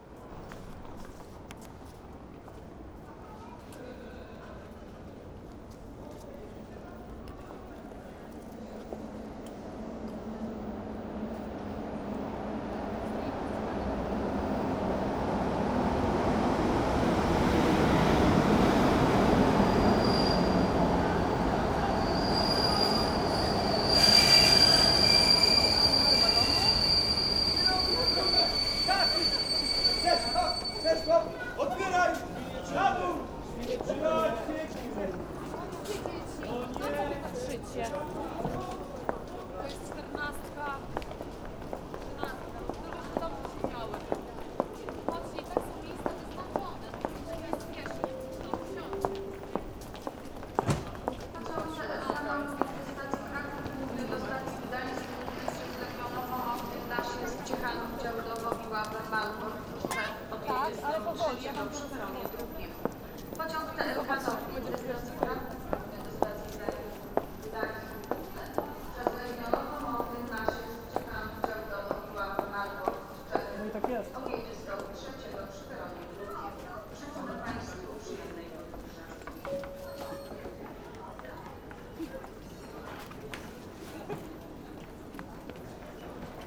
Warsaw, central train station, platform - train arival commotion
train to Poznan arives, passengers nervously looking for their compartments, entering the carriage, squeeze through the crowd.